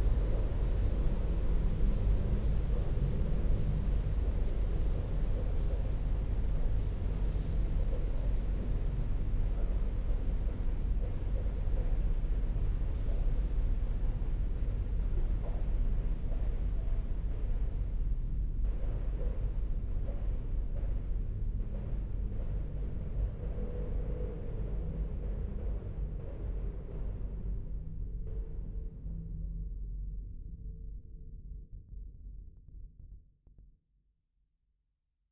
Sachsenhausen-Nord, Frankfurt am Main, Deutschland - Frankfurt, museum, private space
Inside a private flat of the museum in the morning time. The outside slightly windy morning atmosphere filling the silent room. In the distance bathroom noises.
soundmap d - social ambiences and topographic field recordings
Frankfurt, Germany, 26 July 2013